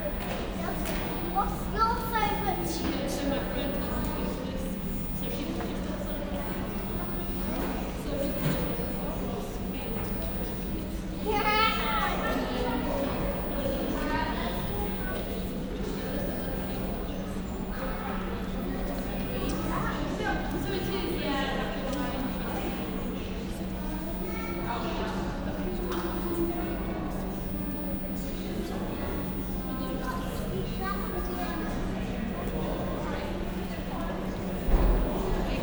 Binaural interior, Malvern, UK
A trial of the now discontinued Sennheiser Ambeo Smart Headsets. I acquired these on Amazon for a very low price. They are not great. They have an intermittent crackle on the right channel and all the features except record are missing on my iphone 6s. For dynamic omnis the mics are not bad. Listen with headphones and see what you think.
24 February, 13:17